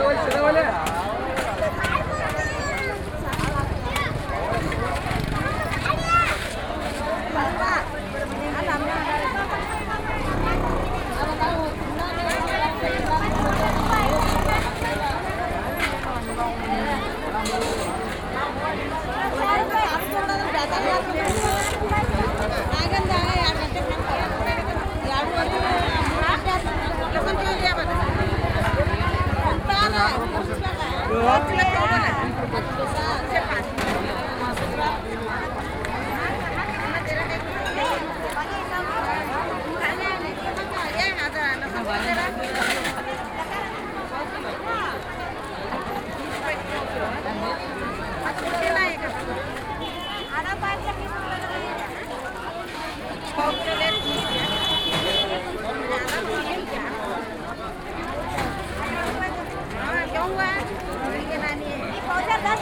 Saundatti, Main street, Vegetables market
India, Karnataka, Market, Vegetables, Crowd, Binaural
Karnataka, India, 23 February 2011, 17:11